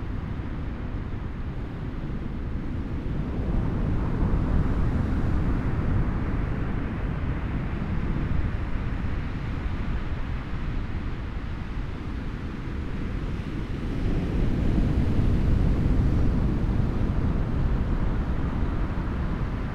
Cooks Landing, Atiu Island, Cookinseln - Pacific at midnight, high tide
Waves on the beach at a place that was signposted "Cooks Landing".It is said that James Cook first set foot on Atiu somewhere in this area. At the night of the recording there were, apart from myself, no intruders. The beach was alive with hermit crabs. The roar of the surf on the outer reef at high tide sets the background for the softer splashing and rushing of the waves on a beach consisting of seashell fragments, coral rabble and coral sand. Dummy head Microphopne facing seaward, about 6 meters away from the waterline. Recorded with a Sound Devices 702 field recorder and a modified Crown - SASS setup incorporating two Sennheiser mkh 20 microphones.
31 July 2012, 00:08